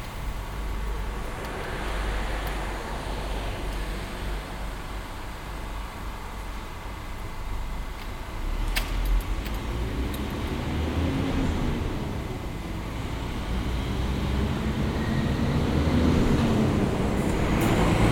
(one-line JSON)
{"title": "Druskininkai, Lithuania, cable way", "date": "2022-09-08 11:50:00", "description": "Cable way cabins arrining from snow arena to Druskininkai", "latitude": "54.02", "longitude": "23.97", "altitude": "95", "timezone": "Europe/Vilnius"}